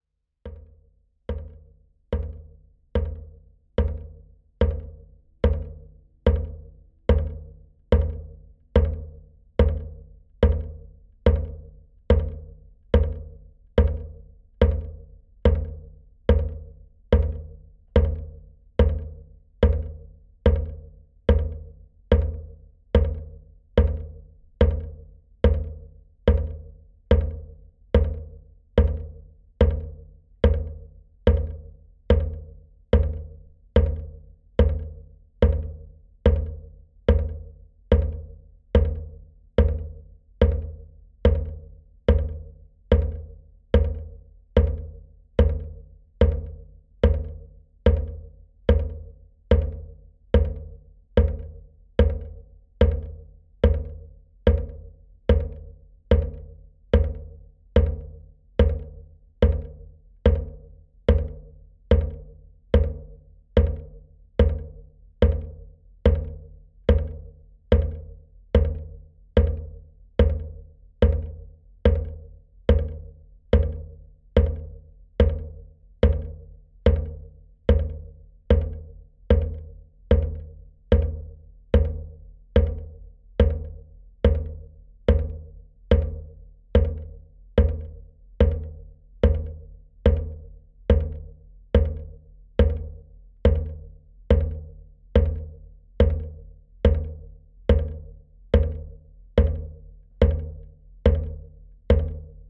Ilzenbergo k., Lithuania, rain pipe rhytmic
water drips from rain pipe. contact microphones